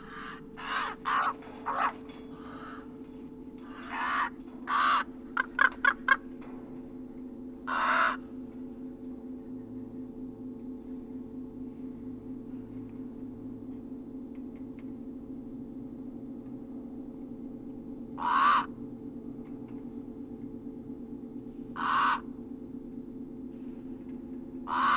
Bartlett, CA, USA - Lone Pine Earthquake and Aftershocks
Metabolic Studio Sonic Division Archives:
Magnitude 5.8 earthquake and aftershocks centered in the town of Lone Pine on June 24th, 2020. Recorded from inside 80 foot tall abandoned silo. One microphone inside the silo and two microphones inside adjacent abandoned factory.